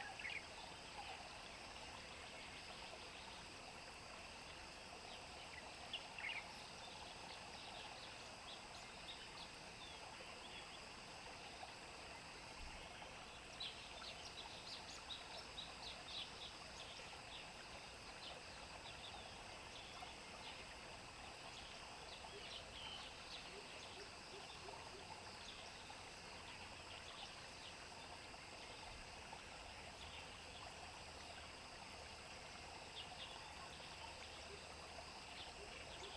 桃米巷, 埔里鎮 Puli Township - Bird calls
Bird calls, Frogs chirping
Zoom H2n MS+XY